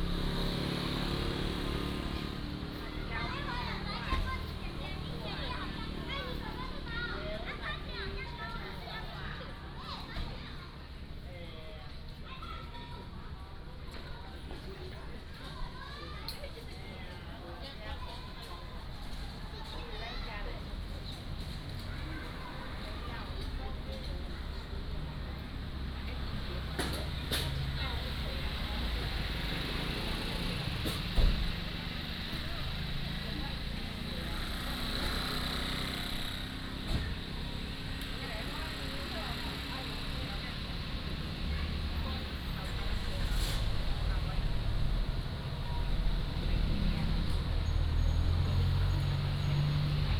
瑞穗村, Rueisuei Township - small Town
small Town, Traffic Sound, Children, Next to the Market
Hualien County, Taiwan